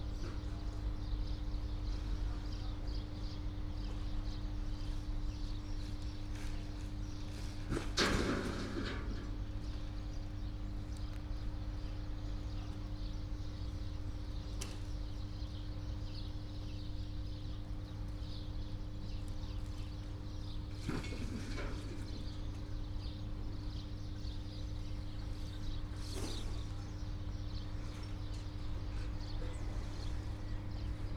Maghtab, Naxxar, Malta - recycling station, landfill site
migrant and local workers sorting garbage at one of the rare recycling sites. this one is located near a huge landfill in the north of Malta, a highly controversial project. Almost all waste of Malta goes here, but the disposal is not safe, according to EU assessment, poisonous fluids etc. are migrating into the ground, water and sea.
(SD702, DPA4060)